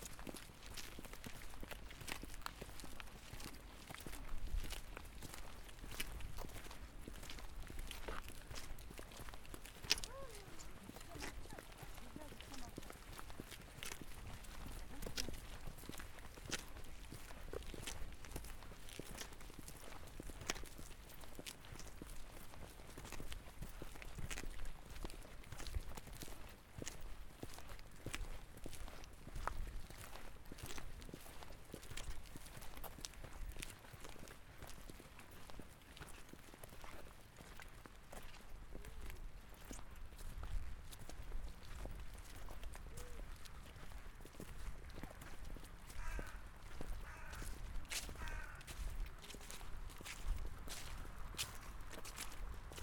Kalamaja park (former cemetery) - A sonic walk and deep listening to Kalamaja 2 (from Kai Center)
Recorded with a Zoom H4N Pro, pointed at the ground while walking together with 17 other people
A sonic walk and deep listening to Kalamaja - organised by Kai Center & Photomonth, Tallinn on the 3rd of November 2019.
Elin Már Øyen Vister in collaboration with guests Ene Lukka, Evelin Reimand and Kadi Uibo.
How can we know who we are if we don't know who we were?... History is not the story of strangers, aliens from another realm; it is the story of us had we been born a little earlier." - Stephen Fry